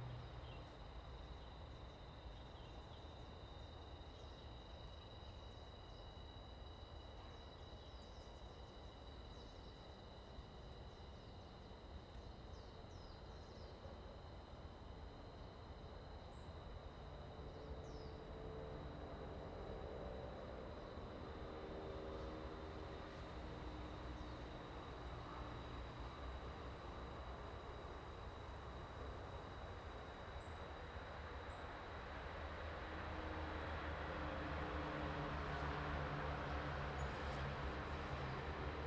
in the woods, the wind, Traffic Sound, Aircraft flying through
Zoom H2n MS +XY